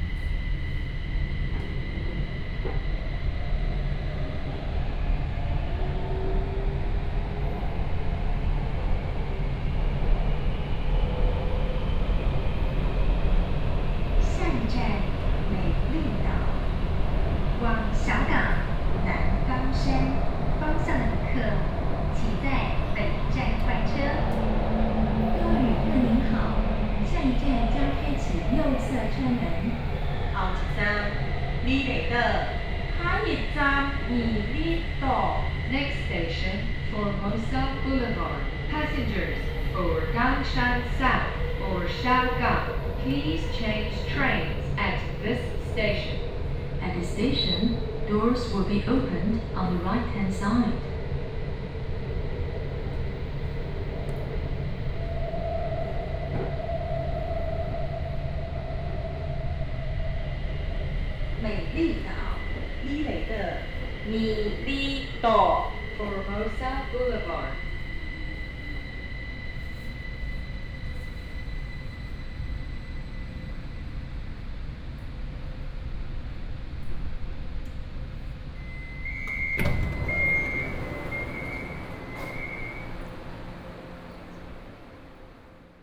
高雄市前金區, Taiwan - Orange Line (KMRT)
Kaohsiung Mass Rapid Transit, from Yanchengpu station to Formosa Boulevard station